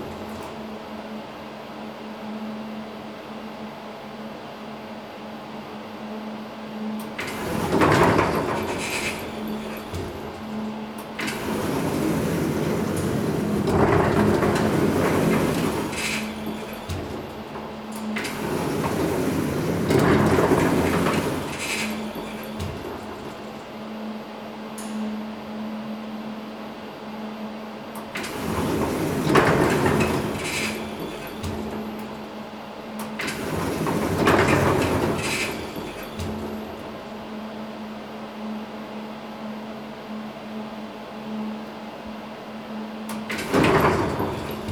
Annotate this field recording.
elevator door broken down. they wouldn't close full and the elevator couldn't move. sliding back and forth in an irregular manner.